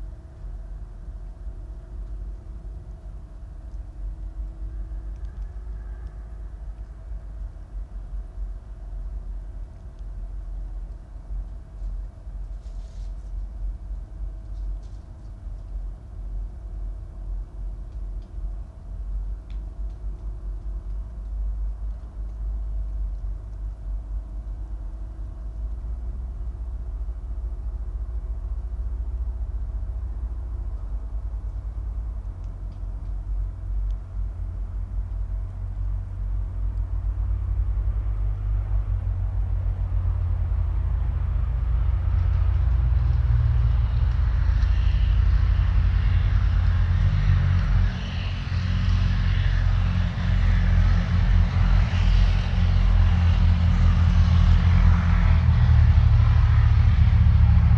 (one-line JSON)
{"date": "2021-03-24 19:15:00", "description": "We were all extremely surprised (alarmed even) when this huge fossil fuel machine moved closer and closer to the mics and then receded. Nobody know what it is (probably a large tractor). It led to much speculation in the chat. Other works sounds are audible in the far distance - also unknown. There is no wind, the generator is not rotating, but still hums continuously and twangs occasionally.", "latitude": "52.69", "longitude": "13.64", "altitude": "77", "timezone": "Europe/Berlin"}